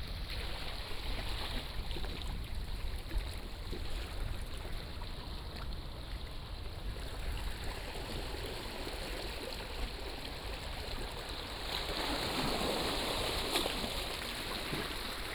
烏石鼻, Taiwan - Waves and Rock
Thunder, Waves, Rock
Taitung County, Taiwan, 8 September 2014, 15:06